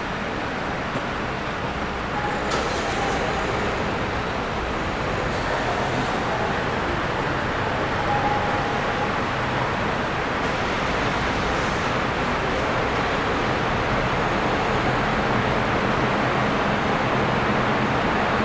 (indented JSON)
{
  "title": "Dover Port, Dover, United Kingdom - Driving off the ferry",
  "date": "2015-07-08 19:44:00",
  "description": "Recorded on the interna mics of a Zoom H4n which was being held out of the window, this clip has the sounds of a walkie-talkie, car engines starting, and finally driving off the ferry and being buffeted by wind.",
  "latitude": "51.13",
  "longitude": "1.34",
  "altitude": "9",
  "timezone": "GMT+1"
}